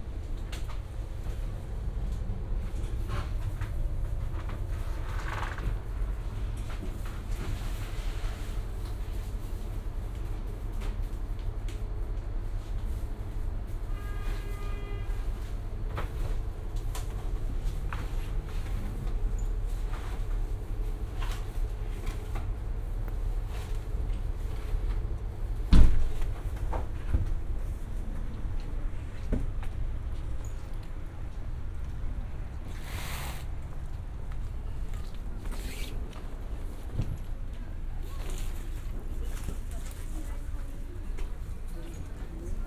Poznan, Sobieskiego housing complex - marketplace
(binarual recording) walking along a small marketplace. a rather small corridor made of two rows of small shops on both sides. not to many customers on a weekday. starting in a baker's shop, ending on a noisy street. (roland r-07 + luhd PM-01 bins)